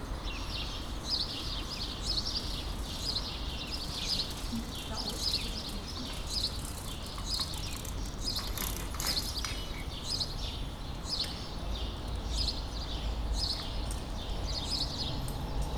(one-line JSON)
{"title": "Berlin: Vermessungspunkt Friedelstraße / Maybachufer - Klangvermessung Kreuzkölln ::: 22.05.2011 ::: 05:17", "date": "2011-05-22 05:17:00", "latitude": "52.49", "longitude": "13.43", "altitude": "39", "timezone": "Europe/Berlin"}